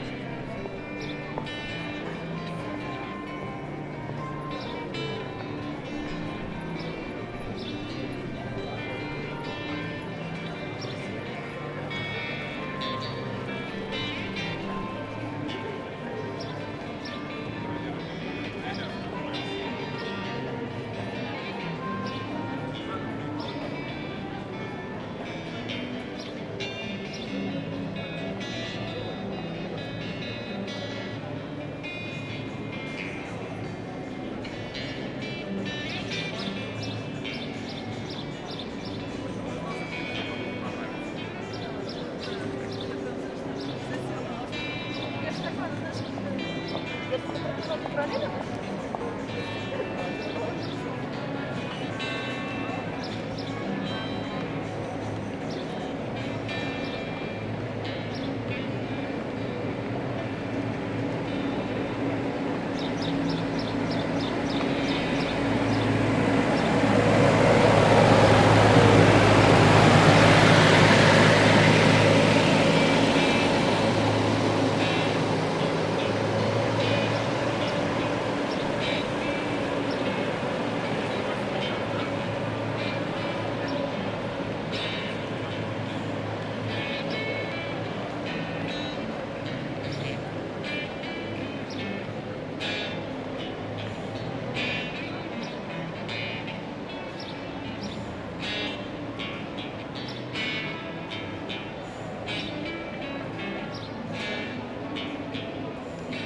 Moscow, Arbat - People Traffic, Musicians
People, Musician, Street Vendors